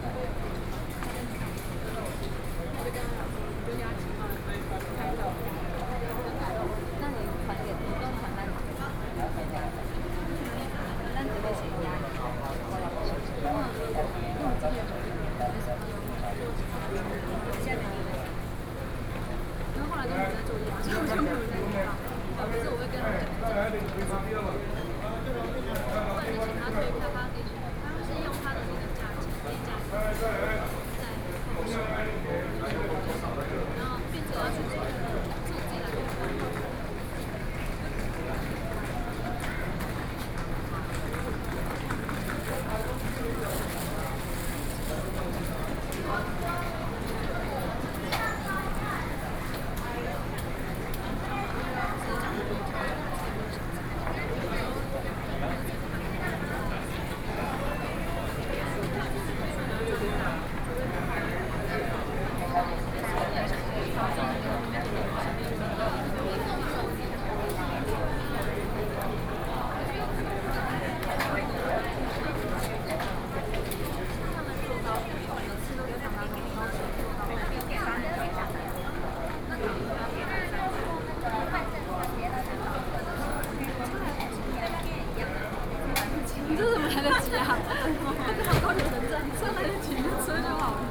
Taipei Main Station - Ticket office
Waiting in front of the Ticket office, Sony PCM D50 + Soundman OKM II